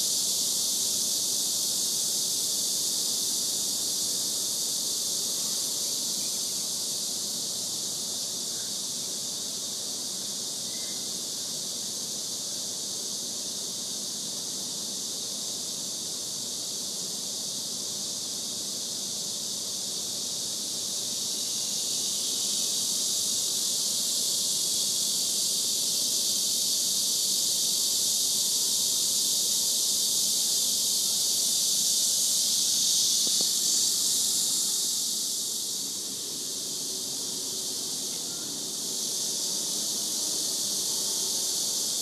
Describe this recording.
It's summer. And with it comes the deafening sound of Cicadas. Without it, it would not be summer. At least not here, not now.